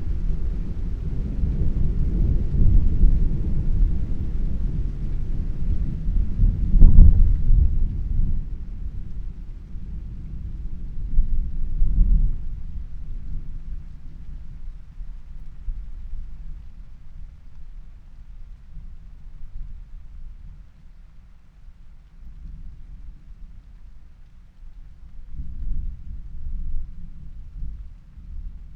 Chapel Fields, Helperthorpe, Malton, UK - thunderstorm ...
thunderstorm ... SASS on tripod to Zoom F6 ... voices ... water percolating down pipes ... the ducks ... again ... song thrush song ... really like this excerpt ...